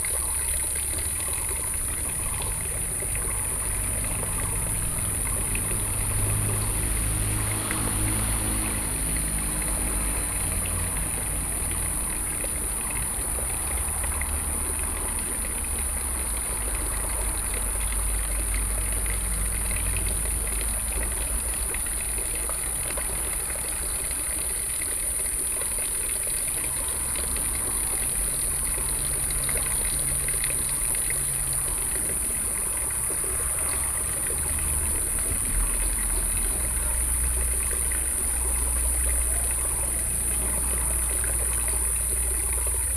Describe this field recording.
In the Temple Square, Fountain, Bird calls, Cicadas cry, Traffic Sound, Binaural recordings, Sony PCM D50